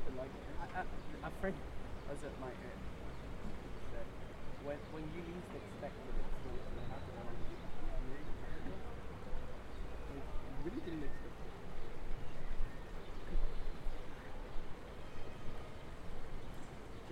Aufnahme an der Donau; Abendstimmung
Regensburg, Germany, 1 June